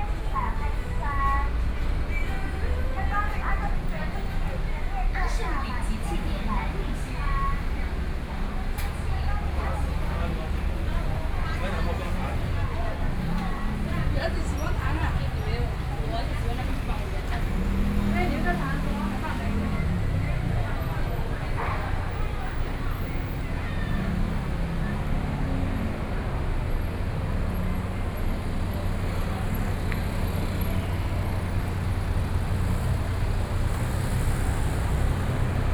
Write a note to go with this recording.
walking on the Road, Traffic Sound, Various shops sound, Into convenience store, Sony PCM D50+ Soundman OKM II